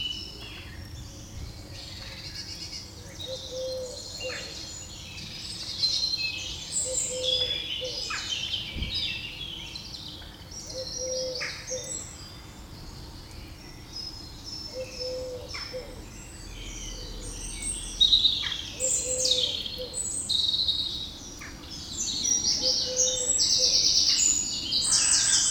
Mont-Saint-Guibert, Belgique - A quiet sunday morning on the pond
Morning recording of a pond without name near the Beclines street. It's a peaceful place, because it's almost abandoned. Some years ago, a Corbais real estate developer had the idea to build a lake city. It was rejected and since, it's an abandoned place. It's quite wild, there's trees fallen in the pond. Listen to all the friends the birds, I listed (at least), with french name and english name :
Rouge-gorge - Common robin
Merle noir - Common blackbird
Poule d'eau - Common moorhen
Pouillot véloce - Common chiffchaff
Tourterelle turque - Eurasian Collared Dove
Pigeon ramier - Common Wood Pigeon
Choucas des tours - Western Jackdaw
Troglodyte mignon - Eurasian Wren
Mésange bleue - Eurasian Blue Tit
Mésange charbonnière - Great Tit
Corneille noire - Carrion Crow
Pie bavarde - Eurasian Magpie
(shortly 45:23) Canard colvert - Mallard
Très loin - vache, coq. Plus près : chien, homo sapiens, trains, avions pénibles.
Far - cow, rooster. Closer : dog, homo sapiens, trains, painful planes.